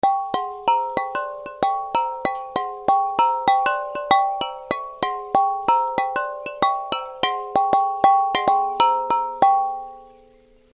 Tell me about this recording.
a second recording of the same object. Also to be found on the Hoscheid by Michael Bradke entitled Lithophon. Eine zweite Aufnahme des gleichen Objekts. Dies kann ebenfalls in Hoscheid gefunden werden: Lithophon von Michael Bradke. Un deuxième enregistrement du même objet. Le Lithophone de Michael Bradke peut aussi être rencontré sur le Sentier Sonore de Hoscheid. Projekt - Klangraum Our - topographic field recordings, sound objects and social ambiences